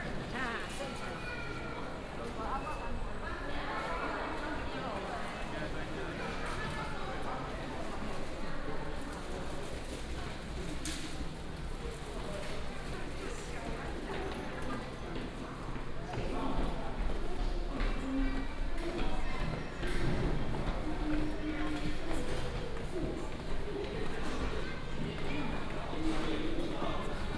沙鹿火車站, Shalu District, Taichung - to the station exit
walking in the Station, From the platform to the station exit, Footsteps
Taichung City, Taiwan, 27 February 2017